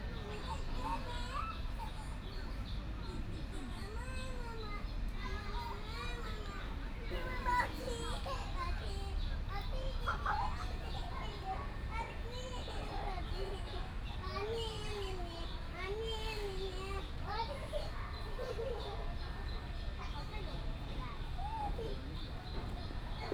新瓦屋客家文化保存區, Zhubei City - Taiwan traditional building preservation area
Taiwan traditional building preservation area, Traffic sound, sound of the birds, Child